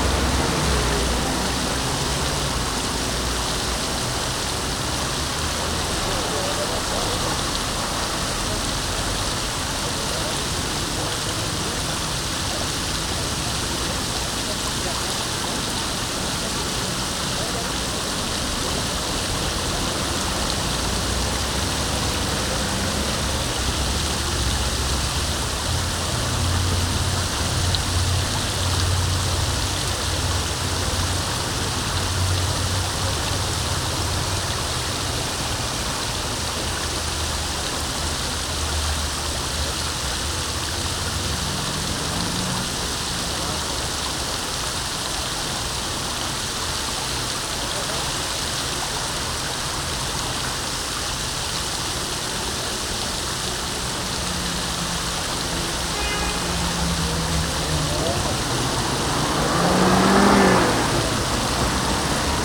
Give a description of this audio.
Fontaine Place Albert 1er, Orléans (45-France)